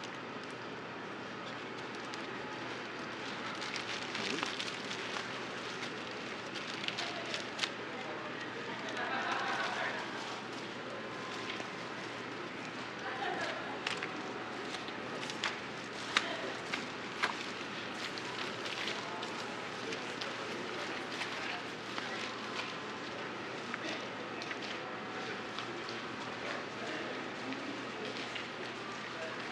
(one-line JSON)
{"title": "Rue Saint-Paul O suite, Montréal, QC, Canada - Le Petit Dep on Saint-Paul", "date": "2021-01-02 17:03:00", "description": "Recording of a popular Montreal Old Port Street, Saint-Paul, pedestrians are walking through the snowy sidewalks and passing vehicles.", "latitude": "45.50", "longitude": "-73.56", "altitude": "22", "timezone": "America/Toronto"}